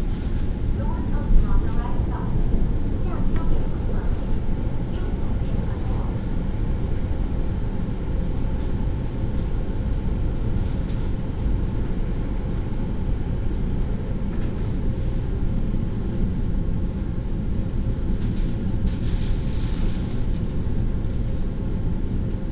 Taoyuan Airport MRT, Zhongli Dist. - In the MRT compartment
Station message broadcast, In the MRT compartment, Taoyuan Airport MRT, from Airport Hotel Station to Airport Terminal 2 Station